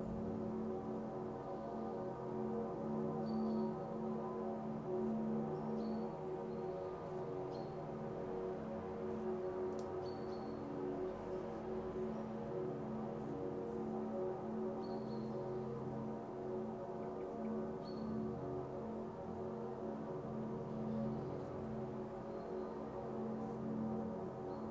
Das Kirchengeläut zum zweiten Advent mischt sich zu einem einzigen Klang, gehört von oberhalb der Stadt. Unterbrochen durch den 10-Uhr-Schlag der Moritzberger Kirchen. Strahlend blauer Himmel, etwa 0°C und Raureif.
Church bells on second advent mixed into one sound, heard from above the city. Clear blue sky, around 0°C, hoarfrost.
Recording: Zoom H2
Hildesheim, Germany, 4 December 2016